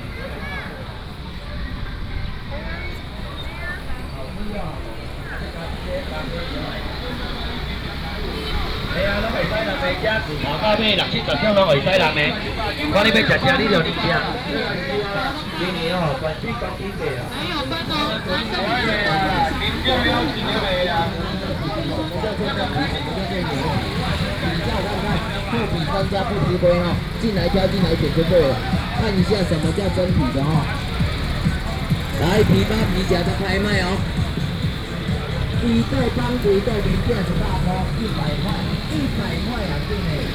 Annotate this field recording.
A variety of market selling voice, Traffic sound, Walking through the market